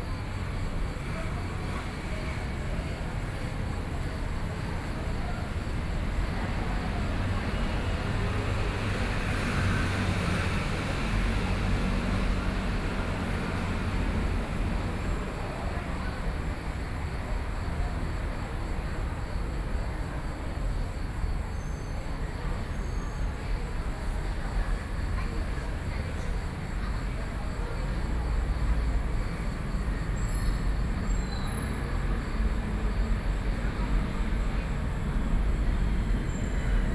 In the bottom of the track, Environmental Noise, Sony PCM D50 + Soundman OKM II